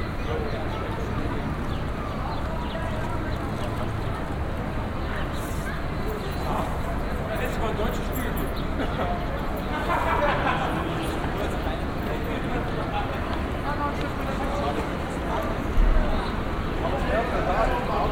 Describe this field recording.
urban soundtrack at alexanderplatz, 1st floor above the rickshaw taxi stand. sounds from various sources - pedestrians, metro tram, train - changing at different speeds. 14.06.2008, 18:20